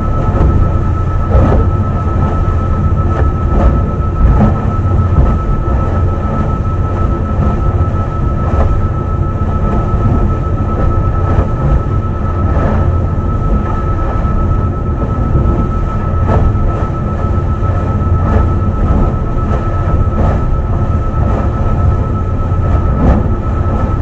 matiatia warf, Auckland
ferry engine and waves crashing on boat.
New Zealand, 1 October 2010, 00:26